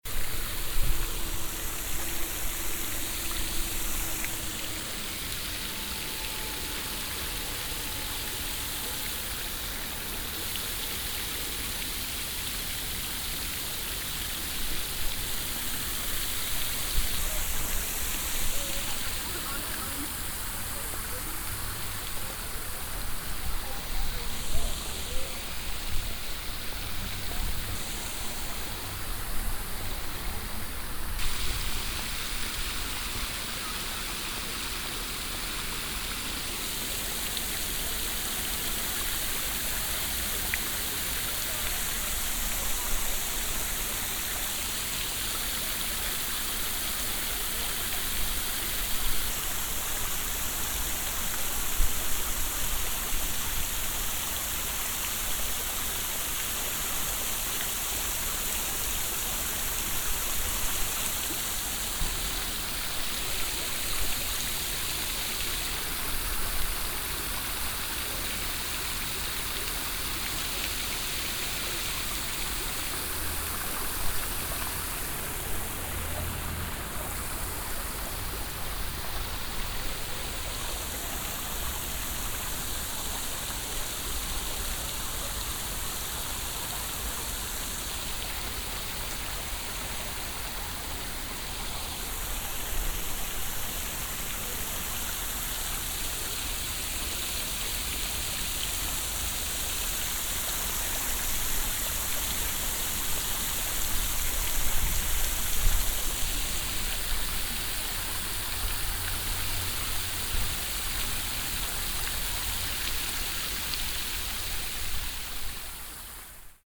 dresden, prager str, sputnik fountain
seventies sculpture fountain in form of a sputnik
soundmap d: social ambiences/ in & outdoor topographic field recordings
parger straße, 17 June